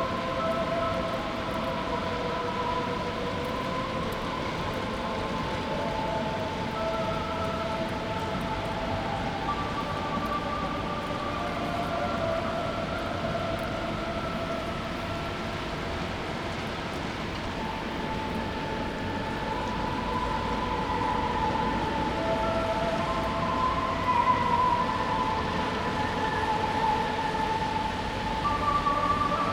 Koryo Hotel, Changgwang St, Pyongyang, Nordkorea - NK-Pyongyang StreetRainMelody
Pyongyang, Koryo Hotel, street with coming rain and melody from loudspeakers; recording out of a window in the 31st floor of Koryo hotel